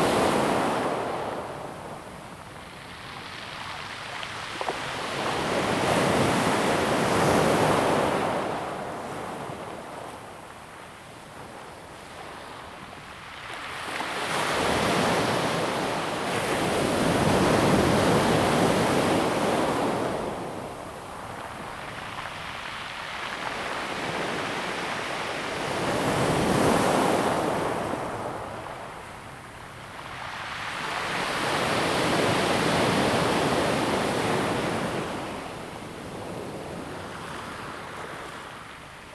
sound of the waves
Zoom H2n MS+XY +Sptial Audio
Xincheng Township, Hualien County, Taiwan, 19 July 2016, ~13:00